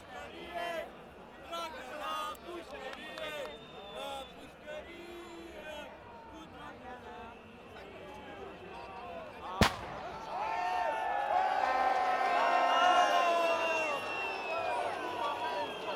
Sector, Bucharest, Romania - Anti graft protest 2017